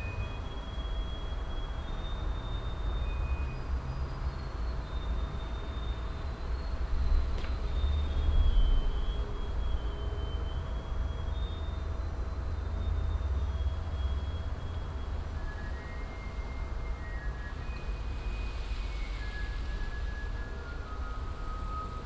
refrath, kornstrasse, alteisenhändlerwagen
die elektronische melodie des alteisenhändlers bei der fahrt durch das angrenzende viertel, morgens
abschliessend flugzeugüberflug
soundmap nrw:
social ambiences - topographic field recordings